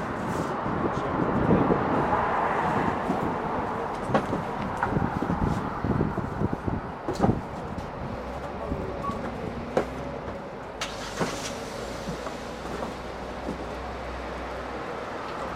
Орджоникидзе ул., Москва, Россия - Ordzhonikidze street
Ordzhonikidze street. At the entrance to the Red and white store. You can hear the wind blowing, people talking, a tram goes, cars go, then a car starts, a truck goes, a motorcycle and other street noises. Day. Clearly. Without precipitation.
Центральный федеральный округ, Россия, 16 May 2020